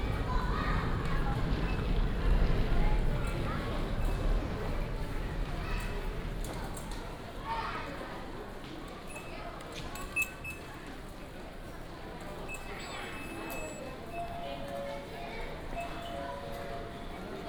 Yuanlin Station, 彰化縣員林市 - Walking in the Station
Walking in the Station, From the station platform, To the station hall, Go outside the station